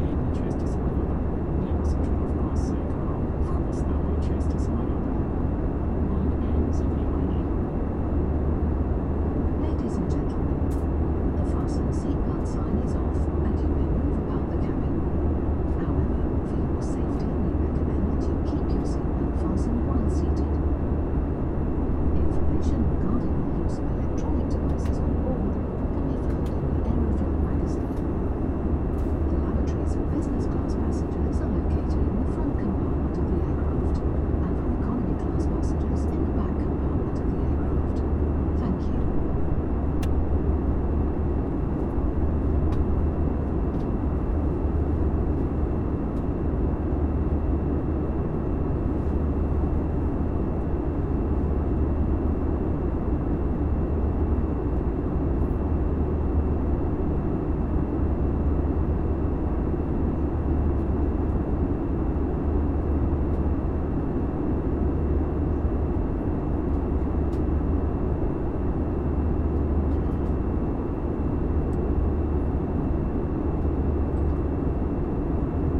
Yerevan, Arménie - Into the plane
Take off of the Aeroflot plane from Yerevan Armenia, Zvartnots airport, to Moscow Russia, Sheremetyevo airport.
Yerevan, Armenia, 15 September 2018, ~07:00